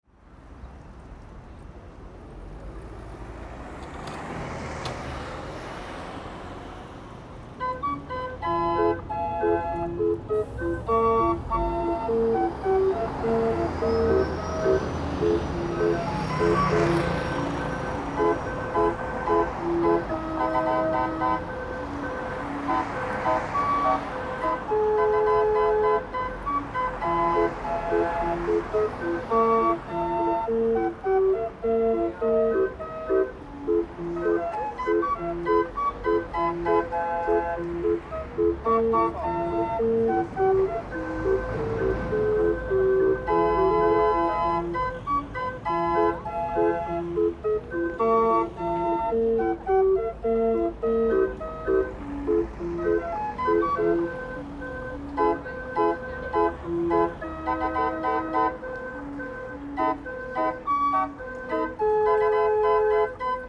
Turned by the hand of a woman in traditional dress.